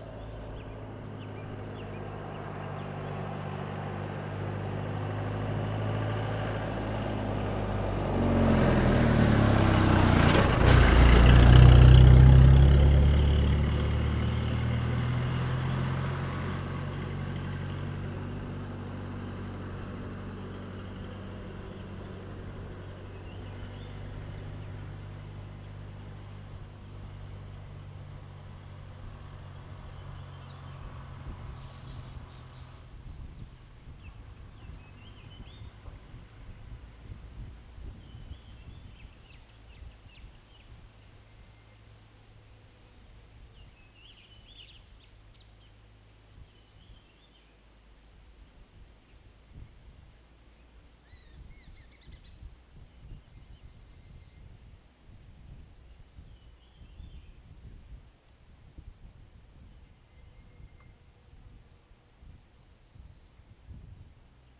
奇美村, Rueisuei Township - Birds singing
Traffic Sound, Birds singing
Zoom H2n MS+XY